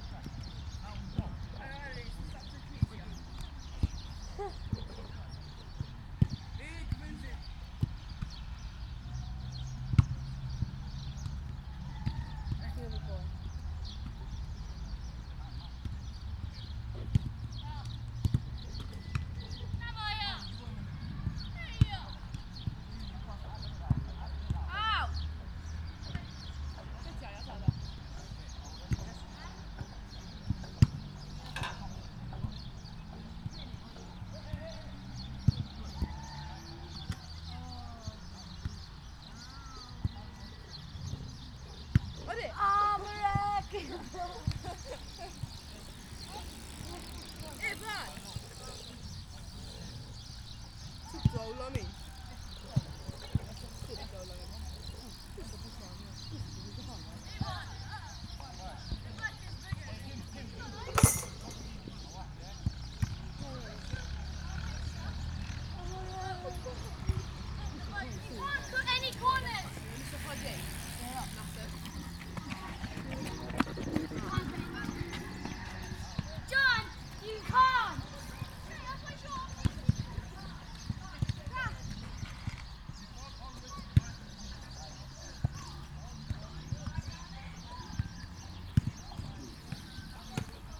{
  "title": "Family Park, Marsaskala, Malta - playground ambience",
  "date": "2017-04-07 17:10:00",
  "description": "The rehabilitation of the closed landfill in Marsascala, used as a dumpsite in the 1970s, is one of the projects part financed by EU Funding for the rehabilitation of closed landfills in Malta & Gozo.\nThe Sant'Antnin Family Park is intended as a leisure area for both local and foreign visitors. The project is spread over 80 tumoli of land. In addition to the recreational park, works have also included the restoration of St. Anthony’s Chapel and the construction of a visitor centre, which serves as an education centre on waste management.\n(SD702, DPA4060)",
  "latitude": "35.86",
  "longitude": "14.56",
  "altitude": "13",
  "timezone": "Europe/Malta"
}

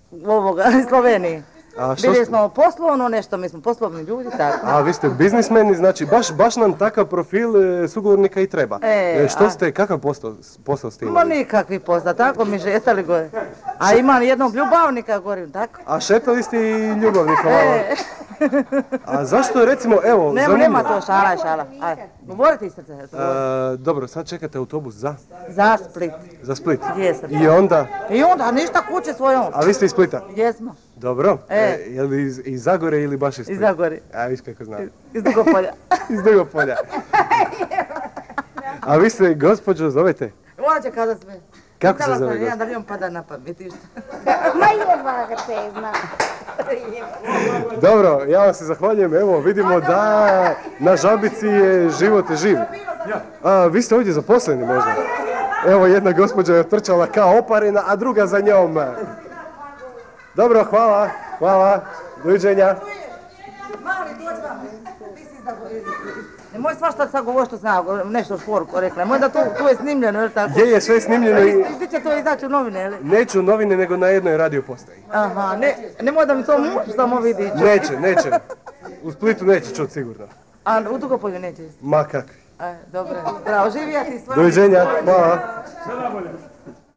{
  "title": "Rijeka, Croatia, Archive - Radio 051 Interview, Zabica - Praha",
  "date": "1994-02-11 01:20:00",
  "description": "Radio 051 interviews in the streets of Rijeka in 1994.\nInterviews was recorded and conducted by Goggy Walker, cassette tape was digitising by Robert Merlak. Editing and location input by Damir Kustić.",
  "latitude": "45.33",
  "longitude": "14.44",
  "altitude": "10",
  "timezone": "GMT+1"
}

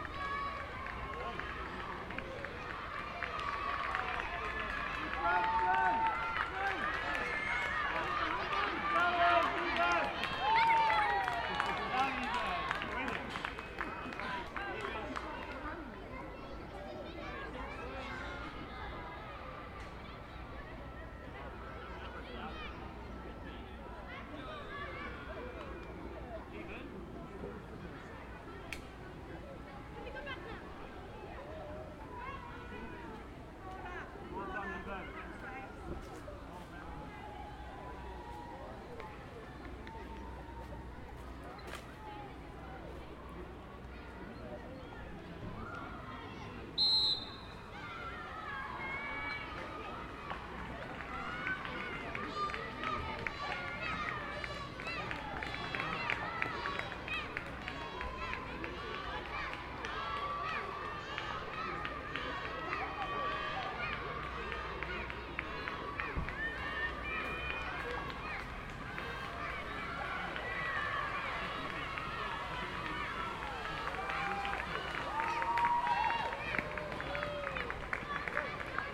{
  "title": "Oxford, Oxfordshire, UK - Sports Day Races, 2014 (from a distance)",
  "date": "2014-07-09 14:17:00",
  "description": "Sports Day at St Barnabas' School, Oxford. Recorded from underneath trees in one of the playgrounds. Better ambience. Recorded via a Zoom H4n with a Windcat on. Sunny weather, some wind in trees can be heard. Also some of the sounds from nearby streets can be heard. The Zoom was placed on part of a climbing frame.",
  "latitude": "51.76",
  "longitude": "-1.27",
  "altitude": "61",
  "timezone": "Europe/London"
}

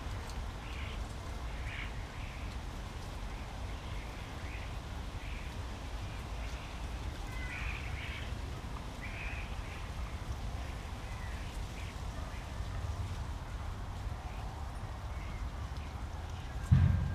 {
  "title": "Tempelhofer Feld, Berlin, Deutschland - a flock of starlings",
  "date": "2017-09-05 17:45:00",
  "description": "a flock of starlings browsing around me searching for food in the grass, suddenly rushing up to the next location (Sony PCM D50, Primo EM172)",
  "latitude": "52.48",
  "longitude": "13.40",
  "altitude": "42",
  "timezone": "Europe/Berlin"
}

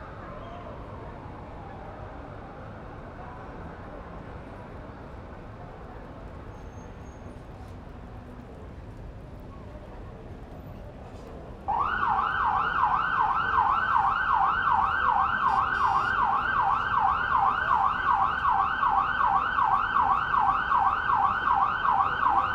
{
  "title": "Colonia Centro, Mexico City, Federal District, Mexique - Rain in Mexico City during the night on 18th of july WLD 2015",
  "date": "2015-07-18 21:00:00",
  "description": "Rain in Mexico City during the night on 18th of july (World Listening Day 2015) recorded from the balcony above the Alameda Park (downtown Mexico City). Thunder and rain, voices and cars in background. Some police siren (during a long time at the end).\nWLD 2015\nRecorded by a MS Setup inside a Cinela Zephyx Windscreen and Rain Protection R-Kelly\nSound Devices 788T Recorder + CL8",
  "latitude": "19.44",
  "longitude": "-99.15",
  "altitude": "2243",
  "timezone": "America/Mexico_City"
}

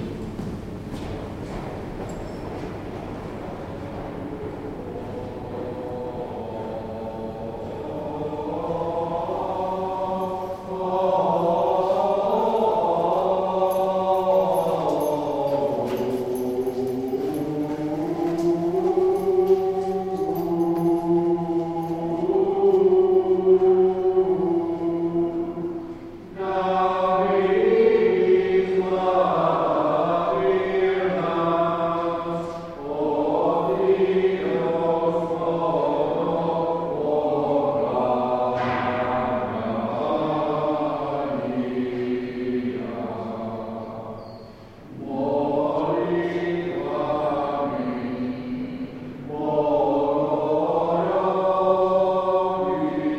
{
  "title": "National Library Klementinum",
  "date": "2010-05-03 18:55:00",
  "description": "stairs in the Klementinum, Slavic studies department",
  "latitude": "50.09",
  "longitude": "14.42",
  "altitude": "204",
  "timezone": "Europe/Prague"
}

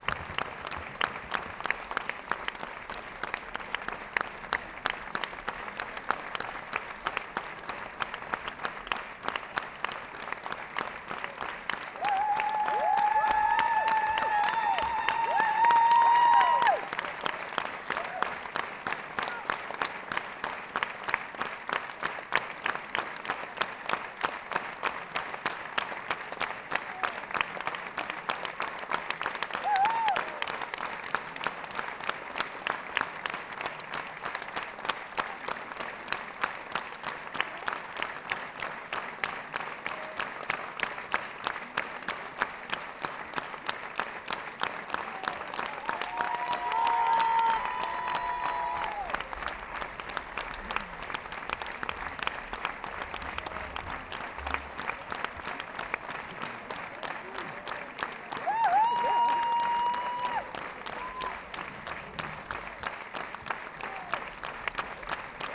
{
  "title": "Namur, Royal Theater, standing ovation for Electre",
  "date": "2012-01-20 22:40:00",
  "description": "Electre from Sophocle adapted by Wajdi Mouawad with Bertrand Cantat in the Choirs.\nPCM-M10 internal microphones",
  "latitude": "50.46",
  "longitude": "4.87",
  "altitude": "85",
  "timezone": "Europe/Brussels"
}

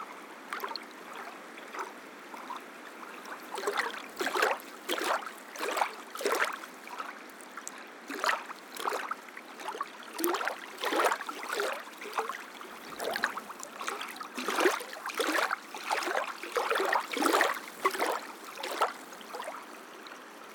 {
  "title": "Kiauliupys, Lithuania, sand quarry pond",
  "date": "2021-09-18 15:30:00",
  "description": "waves in sand quarry pond",
  "latitude": "55.39",
  "longitude": "25.64",
  "altitude": "167",
  "timezone": "Europe/Vilnius"
}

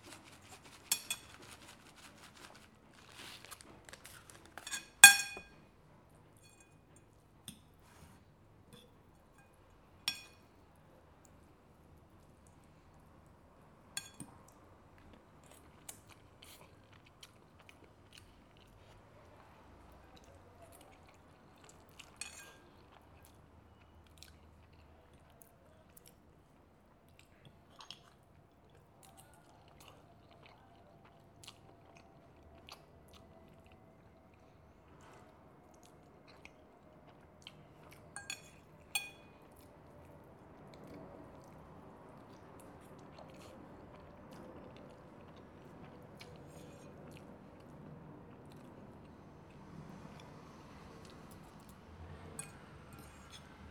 {
  "title": "Edifício Rio Chui - R. Chuí, 71 - Paraíso, São Paulo - SP, 04104-050, Brasil - cozinha/Kitchen",
  "date": "2018-09-21 15:14:00",
  "description": "Paisagem Sonora de cozinha, gravado com TASCAM DR-40. Field Recording of kitchen.",
  "latitude": "-23.58",
  "longitude": "-46.64",
  "altitude": "796",
  "timezone": "GMT+1"
}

